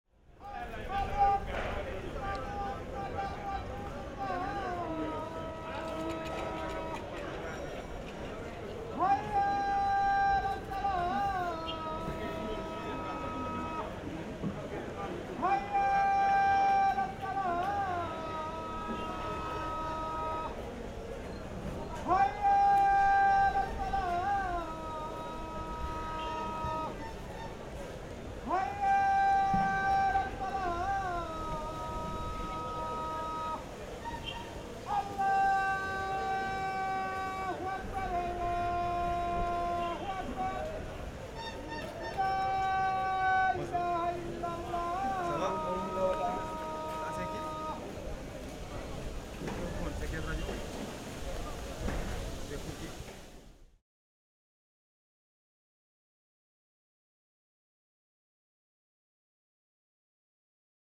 Wurde nach einer Minute von dem Platzt gewiesen.
Rue de Essarts, Dakar, Senegal, 24 May 2004